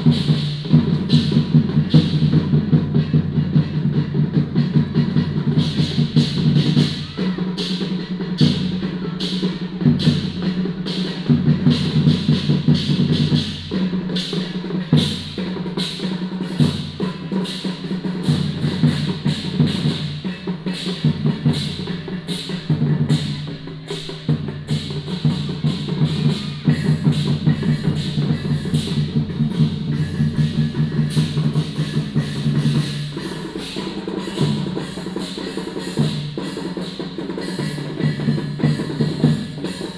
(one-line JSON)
{"title": "Guangqian Rd., Jincheng Township - In front of the temple", "date": "2014-11-02 19:47:00", "description": "A group of students in front of the temple square", "latitude": "24.43", "longitude": "118.31", "altitude": "21", "timezone": "Asia/Taipei"}